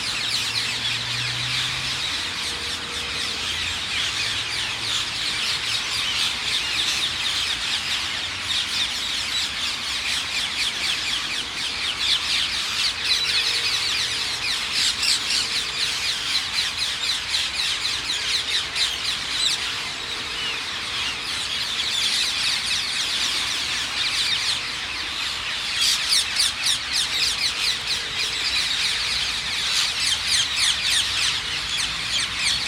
The Hither Green Cemetery is under the flight path into Heathrow airport. There is hardly a break in the aircraft that pass overhead. The planes are loud but so are the Parakeets. The rain is inaudible in comparison.